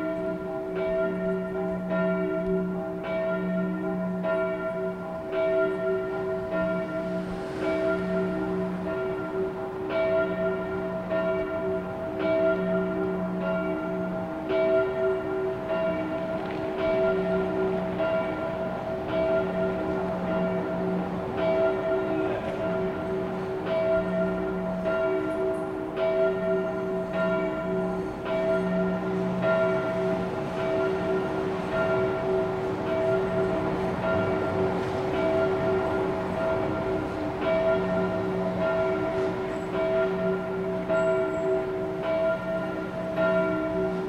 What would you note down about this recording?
Recorded from my balcony: on the event of the global refugees day, churches were called to chime bells for refugees who died while fleeing. Luckily the one in Nansenstrasse joined. This is a 3 min extract from a recording 8 pm until 8.15 pm. beyerdynamic mic / sound device recorder